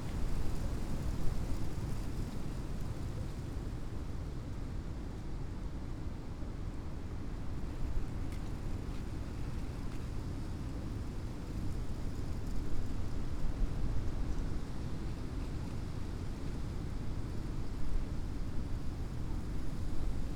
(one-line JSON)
{"title": "groß neuendorf, oder: river bank - the city, the country & me: oak tree", "date": "2015-01-03 15:09:00", "description": "stormy afternoon, leaves of an old oak tree rustling in the wind, barking dog in the distance\nthe city, the country & me: january 3, 2015", "latitude": "52.71", "longitude": "14.40", "altitude": "7", "timezone": "Europe/Berlin"}